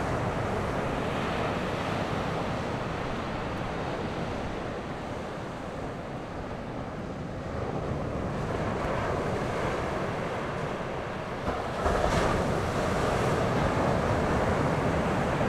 Beigan Township, Taiwan - sound of the waves
Sound of the waves
Zoom H6 +Rode NT4